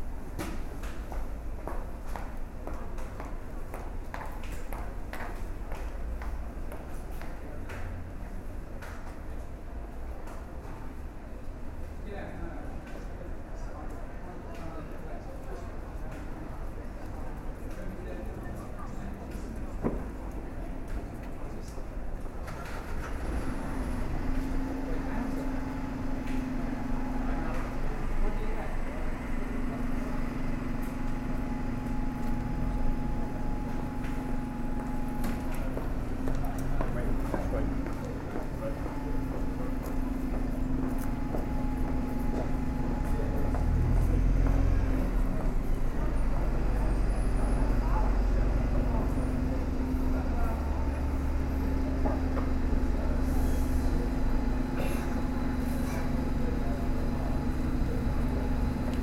Exeter, Outside Halifax Bank, High Street